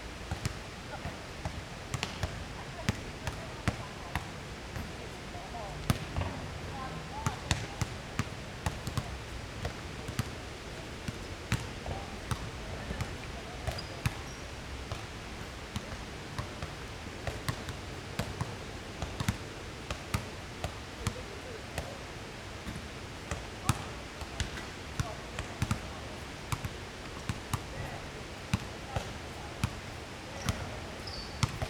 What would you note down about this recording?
in the basketball court next to the stream, Zoom H4n + Rode NT4